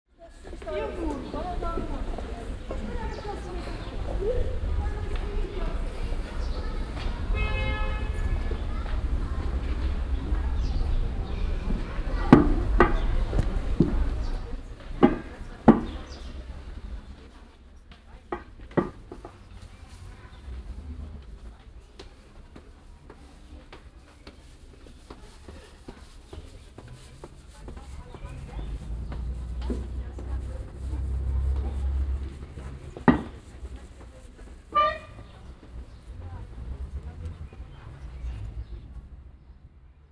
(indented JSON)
{
  "title": "mettmann, gasse, stufe",
  "description": "kleiner gassenaufgang zum markt, treppen, lose steinstufe, mittags\nsoundmap nrw: social ambiences/ listen to the people - in & outdoor nearfield recordings",
  "latitude": "51.25",
  "longitude": "6.98",
  "altitude": "129",
  "timezone": "GMT+1"
}